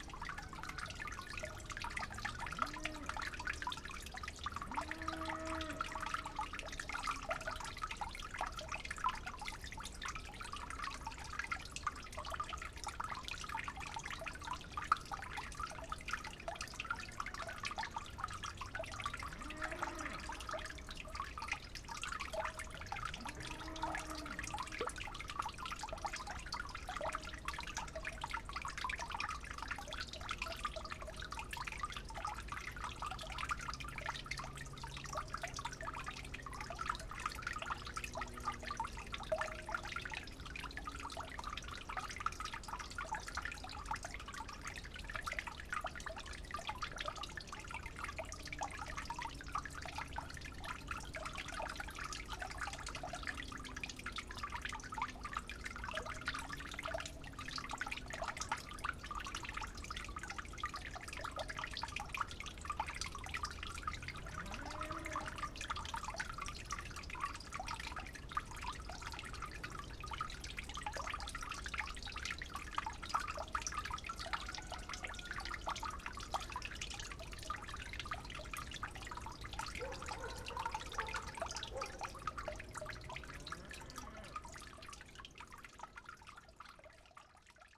Lithuania, Utena, evening at the dripping tube
dripping tube in the fields, hungry cow, tractors and towns hum in the distance
8 November 2011